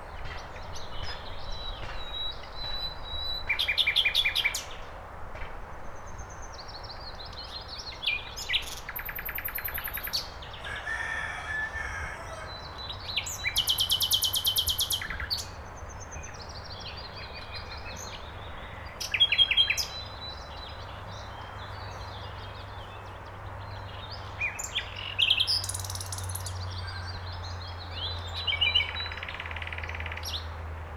Suchy Las, field track - bird in action
a bird chirping away in a tree. i was able to hear it from a great distance and was determined to find it. since it didn't stop the call i tracked it within a few minutes.